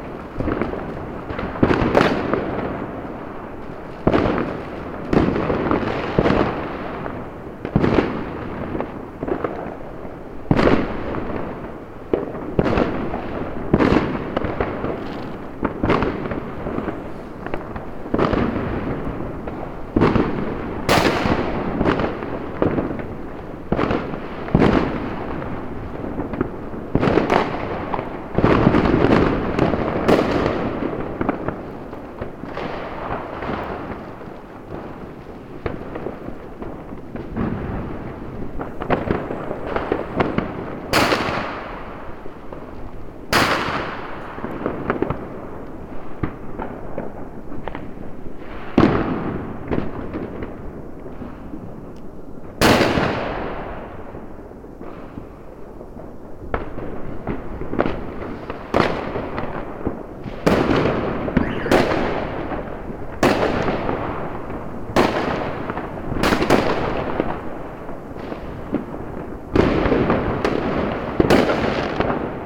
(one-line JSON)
{"title": "Bulharská street, Brno town, Czech Republic - New Years fireworks in Brno", "date": "2014-01-01 00:10:00", "description": "Binaural recording of a festive event on a street. Soundman OKM II Classic microphones.\nListen using decent headphones.", "latitude": "49.23", "longitude": "16.59", "altitude": "239", "timezone": "Europe/Prague"}